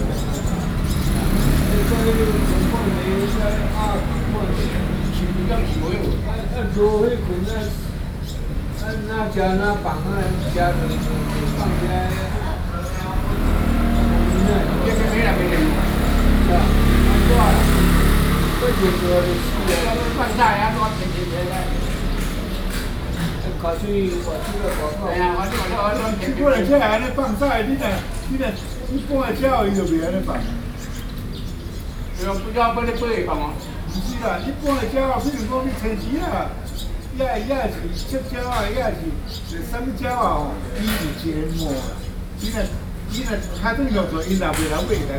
Zhongzheng, Keelung - Old people
A group of old men sitting outside the community center chat Sony PCM D50 + Soundman OKM II